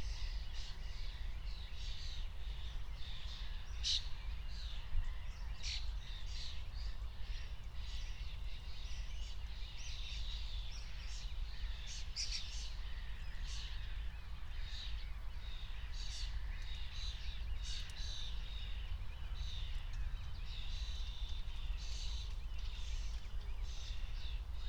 10:17 Berlin, Buch, Moorlinse - pond, wetland ambience
Deutschland, 27 June 2021